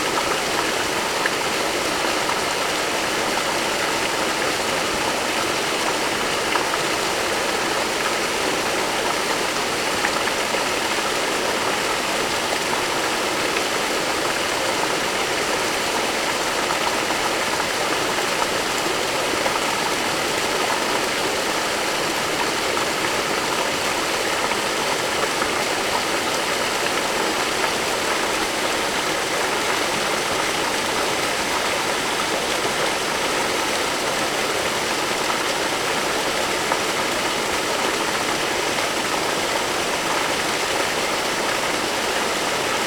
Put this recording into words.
Barrage de Thurins, Jeté du barrage dans le Garon